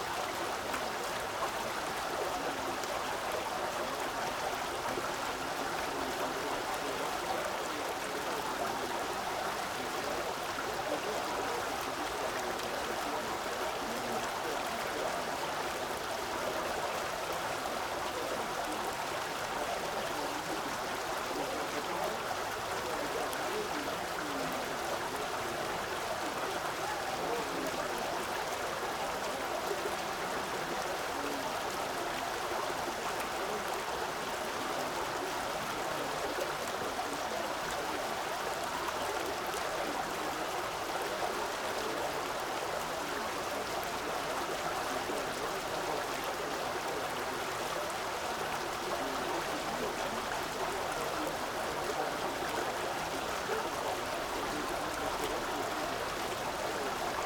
Rue de l'Alzette, Esch-sur-Alzette, Luxemburg - fountain
River Alzette flows under this street which is named after it. Some maps still suggest a visible water body, but only a fountain reminds on the subterranean river.
(Sony PCM D50)
Canton Esch-sur-Alzette, Lëtzebuerg, May 2022